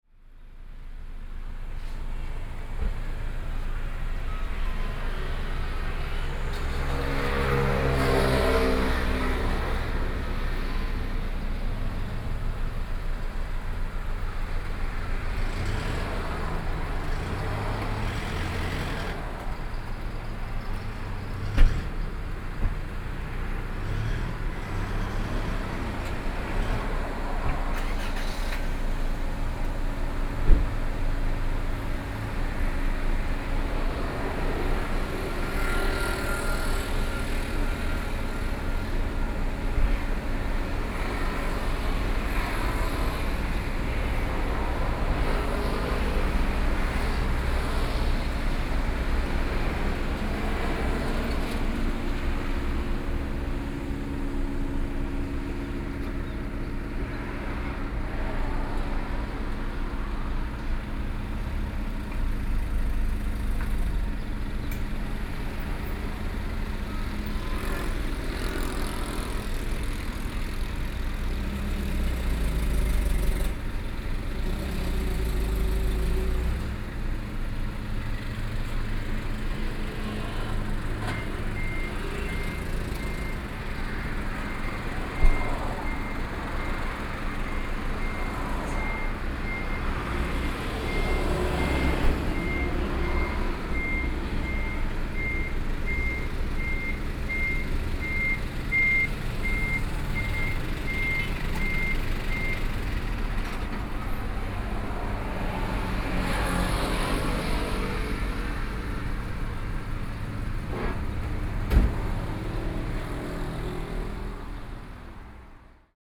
In front of the convenience store, Hot weather, Traffic Sound